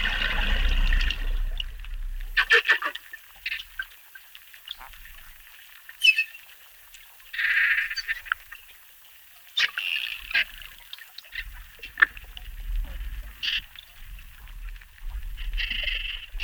Amazonian Dolphins in the Rio Tefé, close to the community of Tauary. Recorded in September 2017.
Brazil, 19 September